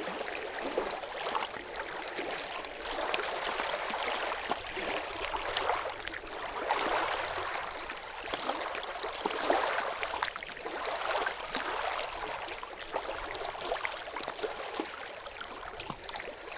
Sound of the Arpi lake, locally called Arpi lich. It's a quite big lake, with a very bad weather because of the mountains on the neighborhood.